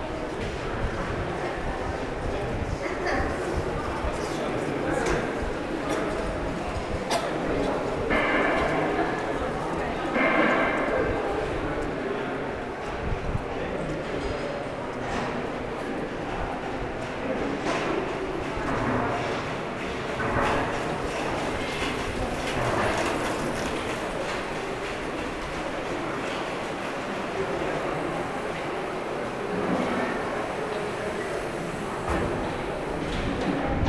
Sankt Pauli-Elbtunnel, Deutschland, Allemagne - Elbtunnel
Sankt-Pauli-Elbtunnel. The tunnel establishes the link below the Elb river. The tunnel is mostly cycleable and pedestrian. Sound of the lifts, and crossing all the tunnel by feet.